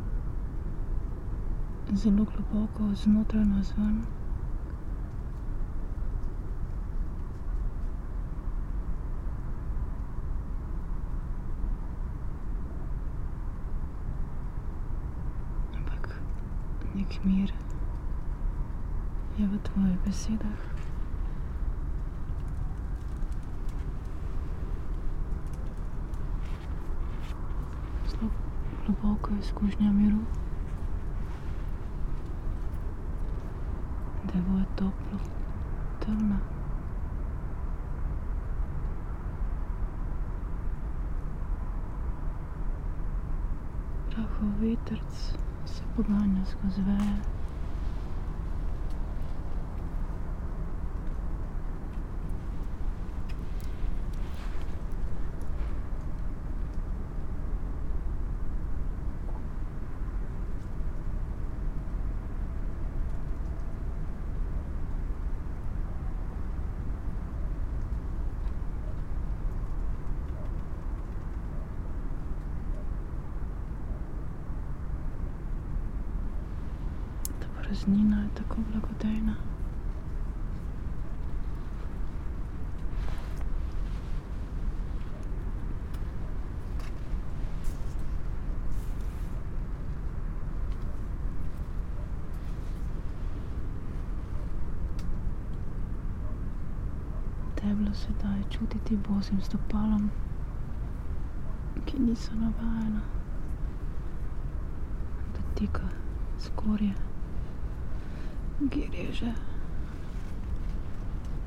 tree crown poems, Piramida - lunar
partial lunar eclipse, full moon, whisperings and spoken words, traffic hum